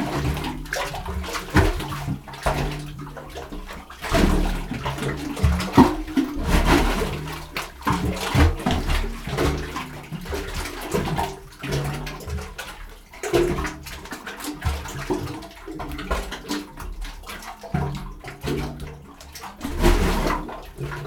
Satlia, Crete - opening in the rock filled with sea water
a hole in the rocks at the sea. waves pumping water into the opening form underneath the rocks. thumpy splashes, pipe like, juicy, metallic reverb.